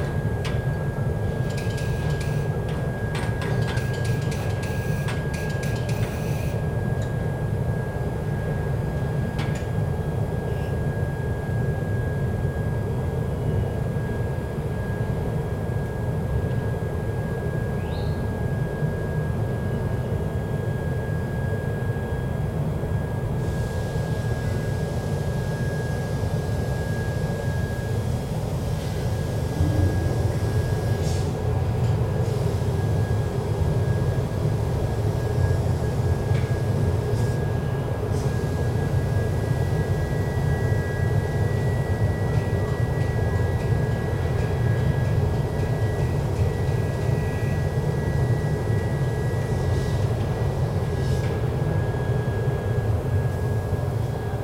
langenfeld, steel factory
industry hall - recording inside a factory for steel production of the company Schmees - here: general atmosphere
soundmap nrw/ sound in public spaces - in & outdoor nearfield recordings